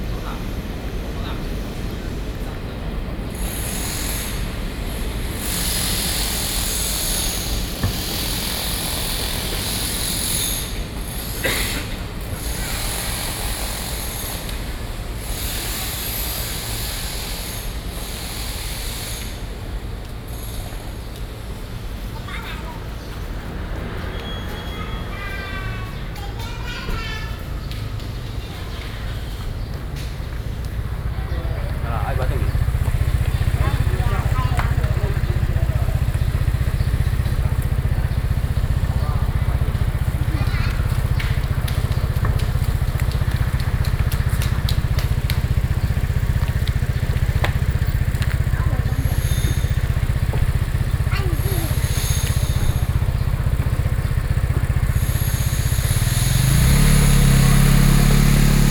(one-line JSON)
{"title": "Shuangxi, New Taipei City - train station", "date": "2012-06-29 17:12:00", "latitude": "25.04", "longitude": "121.87", "altitude": "34", "timezone": "Asia/Taipei"}